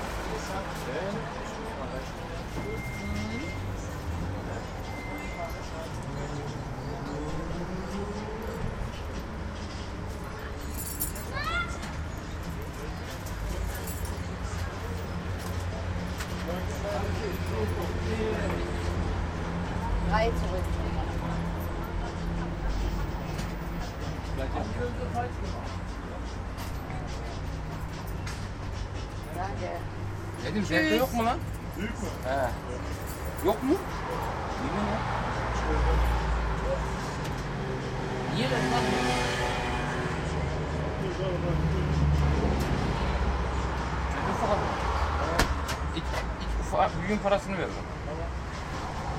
berlin, kottbusser damm: imbiss - the city, the country & me: fried chicken takeaway
people talking at fried chicken takeaway
the city, the country & me: may 9, 2008